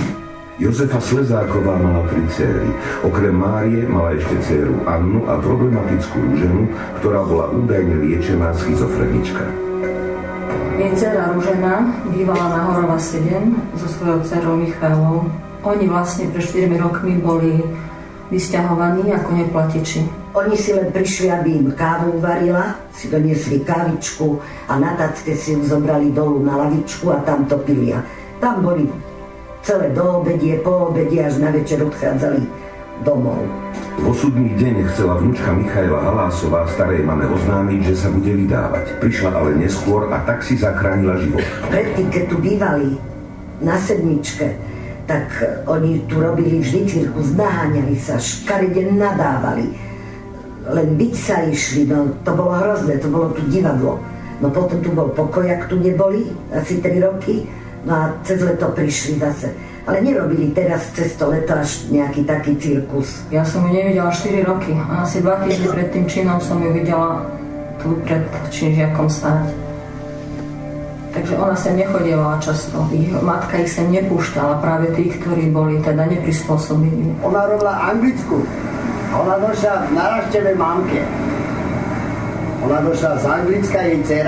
devinska nova ves, u. pavla horova
screening of the staged documentarz film about
Devínska Nová Ves, Slovakia, October 2011